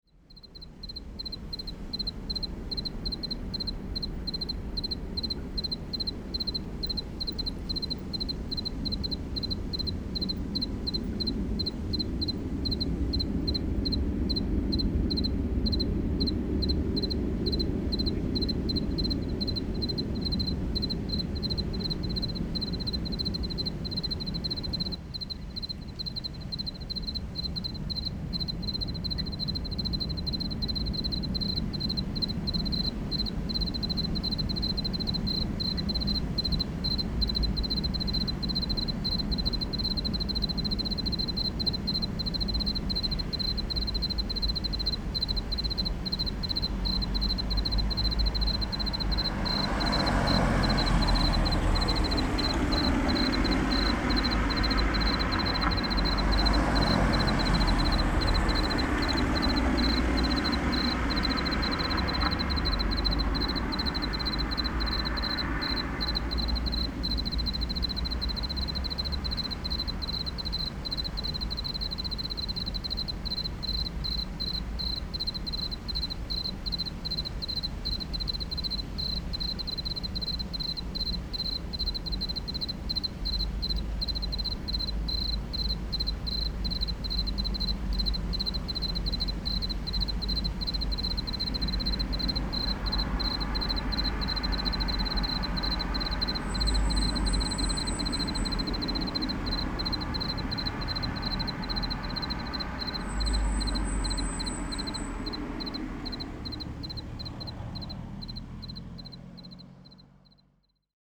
Olomouc, Czech Republic - Railway station crickets
At the crossing in front of the railway station, waiting for the connection
5 September 2012, 23:56